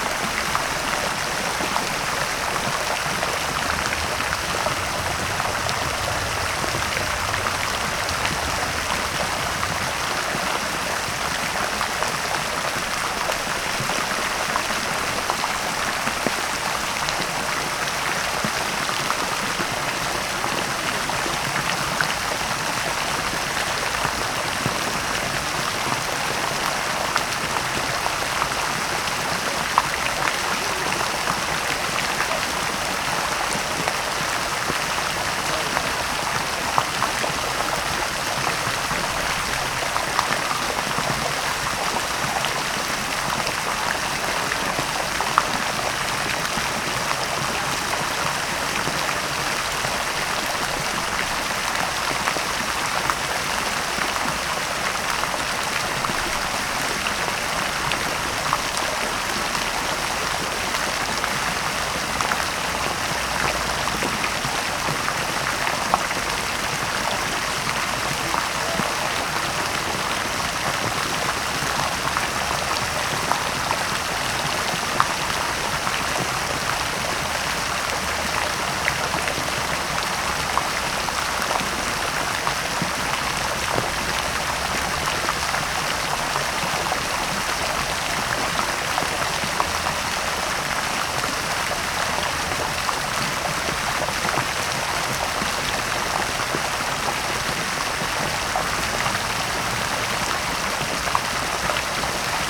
8b Fontanna Kinoteka w Palac Kultury i Nauki, Plac Defilad, Warszawa